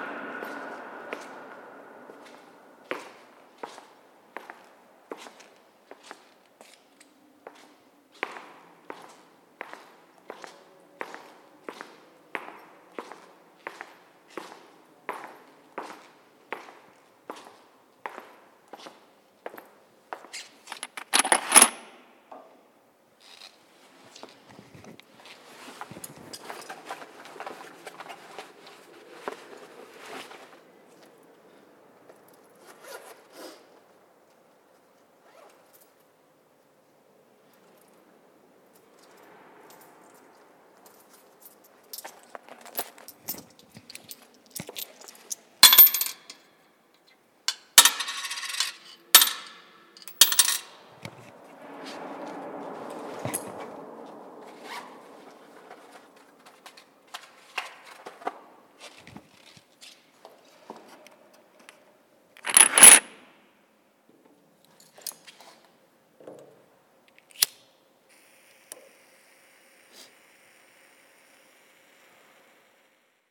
Domplatz, Salzburg, Österreich - empty dome
walk into the empty dome salzburg to ligth a candle